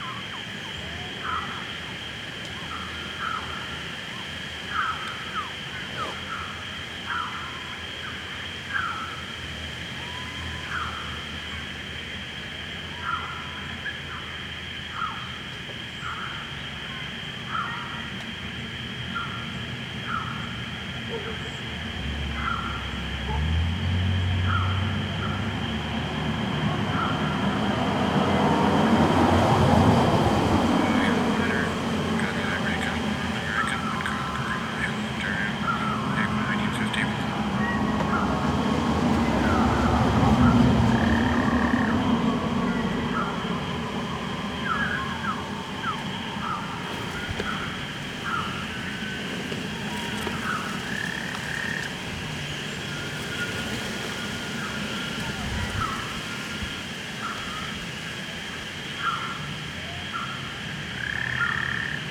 Visually, the piece presents as eight SM58 microphones hanging from branches of a tree, in this case a cedar. The microphones are used 'backwards', as tiny speakers. The sounds heard are from the collection of William WH Gunn, early Canadian environmental sound recordist (provided courtesy of the Macaulay Library, Cornell University), and are all birdsongs recorded in various Ontario locations in 1951-52, including on Manitoulin Island. Periodically Gunn can be heard introducing a recording, and the recording follows. All the birdsongs are played back slowed down to 20% of their original speed.
Recorded with Zoom H2n placed under the tree.
ON, Canada, 9 July 2016